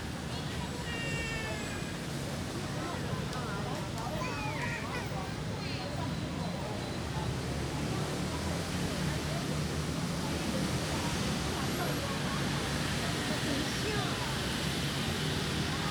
六張公園, Sanchong Dist., New Taipei City - in the Park
in the Park, Child, Aircraft flying through
Sony Hi-MD MZ-RH1 +Sony ECM-MS907
Sanchong District, New Taipei City, Taiwan, 22 June, 15:27